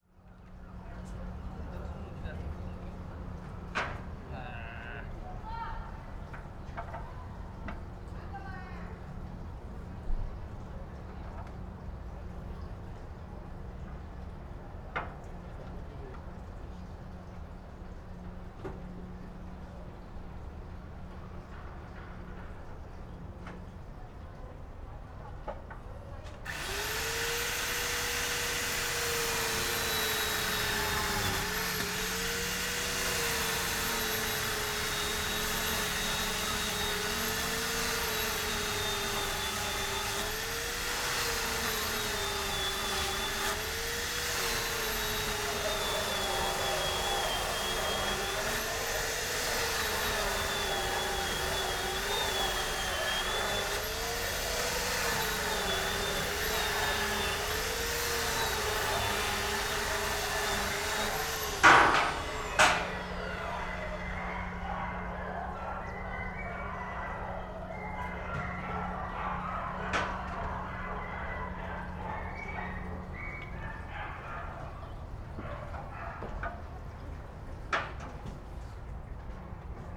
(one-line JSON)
{"title": "Porto, Rua da Senhora das Verdades", "date": "2010-10-13 16:05:00", "description": "street ambience, workers on the roof of a house, sound of the nearby bridge", "latitude": "41.14", "longitude": "-8.61", "altitude": "52", "timezone": "Europe/Lisbon"}